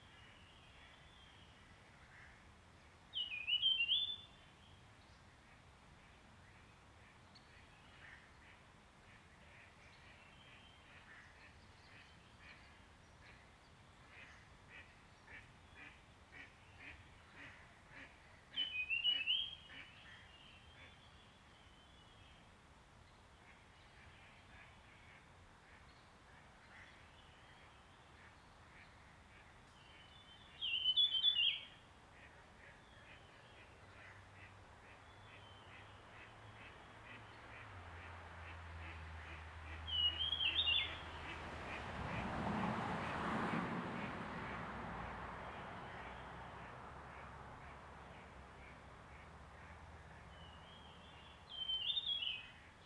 草楠濕地, 埔里鎮桃米里, Nantou County - Bird sounds
Wetlands, Bird sounds
Zoom H2n MS+XY
27 March 2016, 08:11